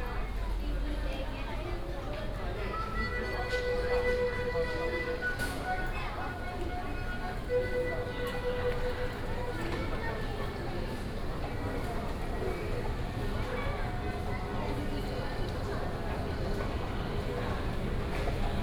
New Taipei City, Taiwan - in the station underground hall
Start walking from the square, To the station underground hall, Go to the MRT station
Banqiao District, New Taipei City, Taiwan